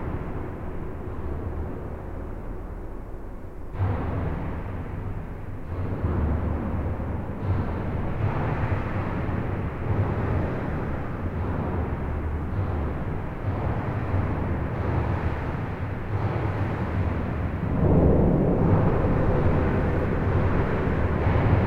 Namur, Belgique - The viaduct
This viaduct is one of the more important road equipment in all Belgium. It's an enormous metallic viaduct on an highway crossing the Mass / Meuse river. All internal structure is hollowed.
This recording is made inside the box girder bridge, as you can walk inside the bridge as in a metallic tunnel. Trucks make enormous explosions. Infrasounds are gigantic and make effects on the human body. It was very hard to record as everything terribly vibrate, but an accomplishment. Flavien Gillié adviced me and thanks to him.
Belgium